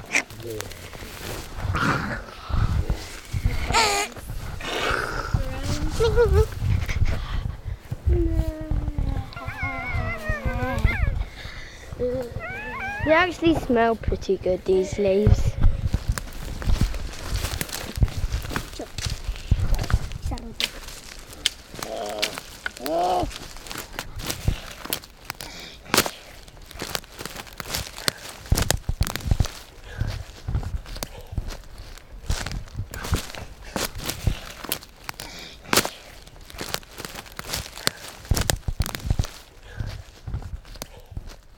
Thorncombe Woods, Dorset, UK - Crunchy footsteps
Children from Dorset Forest School walk through the woods pretending they are animals and record their footsteps on the leaves and twigs along the path.
Sounds in Nature workshop run by Gabrielle Fry. Recorded using an H4N Zoom recorder and Rode NTG2 microphone.
Dorchester, Dorset, UK, 6 August 2015, 12:00